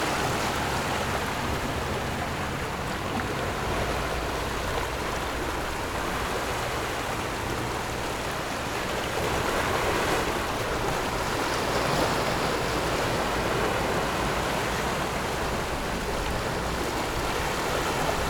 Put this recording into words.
Rocks and waves, Very hot weather, Zoom H6 Ms+ Rode NT4